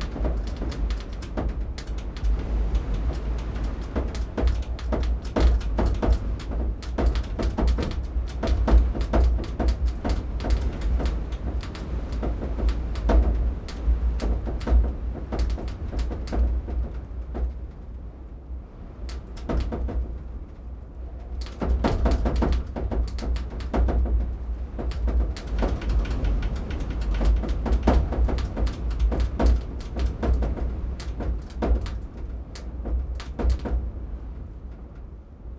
BLOWING IN THE WIND - LOFOTEN - Nesje, 8360 Bøstad, Norvège - BLOWING IN THE WIND - LOFOTEN
CABANE AVEC TOLE DE TOIT ARRACHÉe DANS LE VENT ET LA PLUIE.
SD MixPre6II + DPA4041 dans Cinela PIA2 + GEOPHONE
Nordland, Norge, 20 August 2021, ~13:00